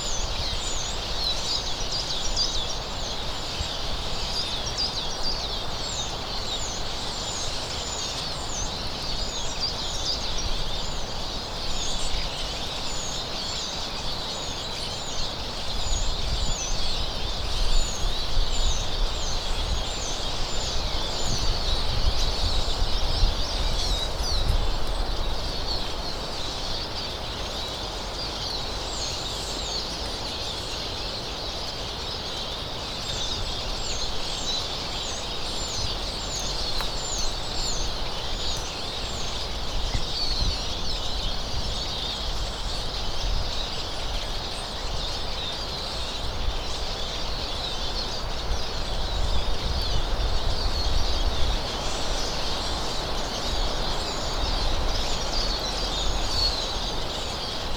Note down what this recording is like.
hundreds of sparrows chirping and pacing around the trees. i have never seen them in those woods so it was a very unusual event. they flew away a bit when i approached but still were very active.